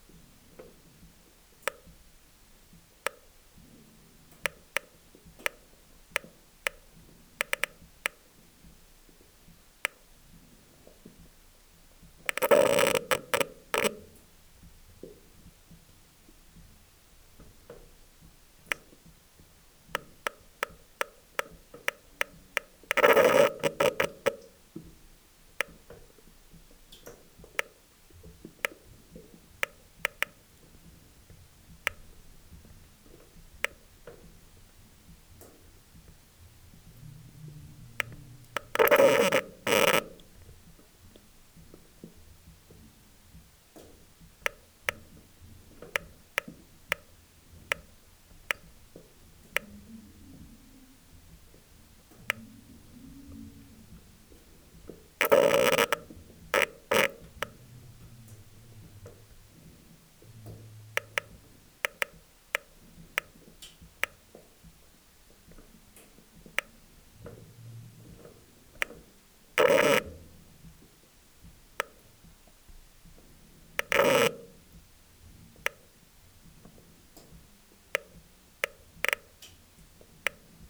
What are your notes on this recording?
How to explain this sound ?! It's quite complicate. We are in an underground slate quarry. It's a dead end tunnel. In aim to extract the bad rocks, miners drill into the stone. They make a long drilling, diameter 3 cm, lenght 4 meters. At the end of the drilling, they put some explosive. Here, it's a drilling. As it was the end of the quarry (bankruptcy), they didn't explode the rocks. So, the long drilling remains, as this, since a century. Inside the stone, there's a spring. Water is following a strange way inside the fracking. This is the sound of the water inside the drilling.